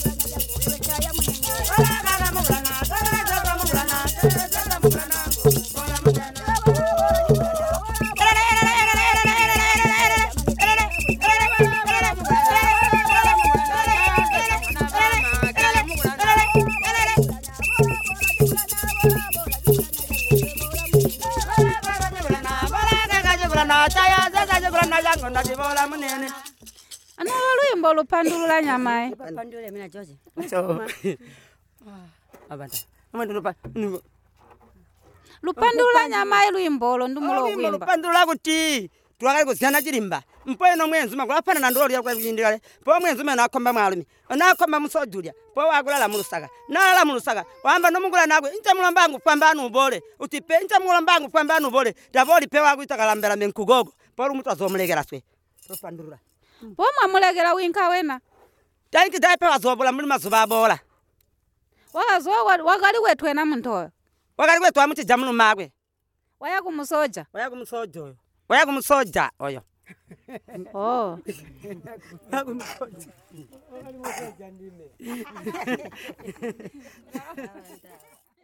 Regina Munkuli, community based facilitator of Zubo Trust made this recordings with her friends.
the recordings are from the radio project "Women documenting women stories" with Zubo Trust.
Zubo Trust is a women’s organization in Binga Zimbabwe bringing women together for self-empowerment.
23 July, 11:40